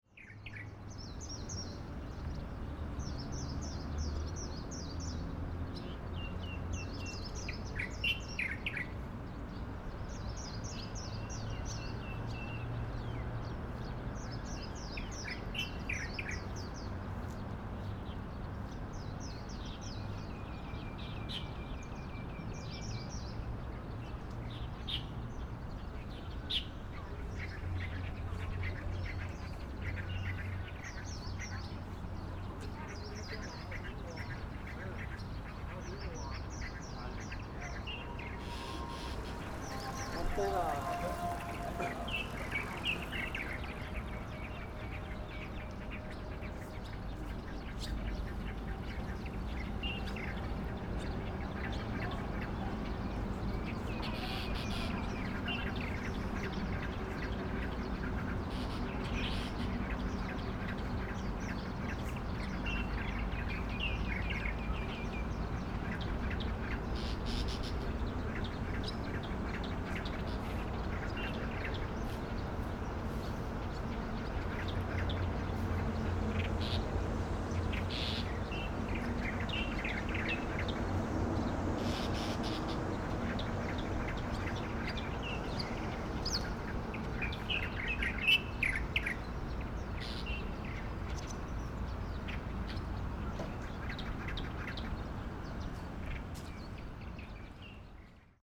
Ln., Sec., Longmi Rd., Bali Dist., New Taipei City - Birds singing
Birds singing, Traffic Sound
Binaural recordings, Sony PCM D50 +Soundman OKM II